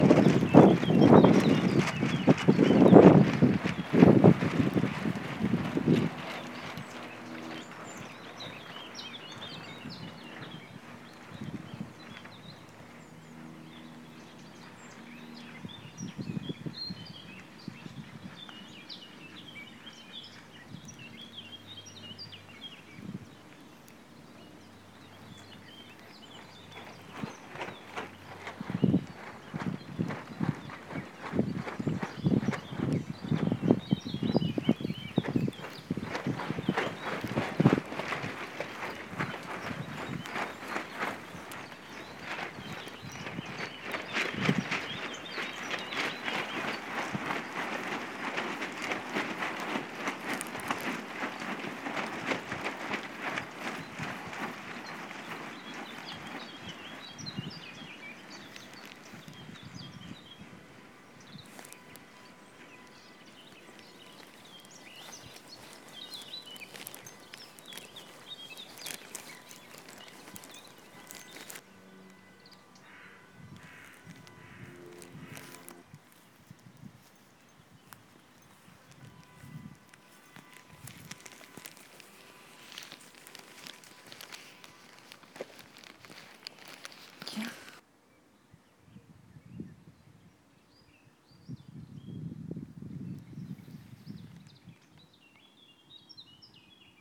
Gießen, Deutschland - Folded parasols
Good Friday on the Landesgartenschau: No staff (except for security), no other visitors. An alley of folded parasols, wind blowing, another distant plane, a car alarm going off somewhere in the streets. Recorded with an iphone4, Tascam PCM app.
Giessen, Germany, 18 April 2014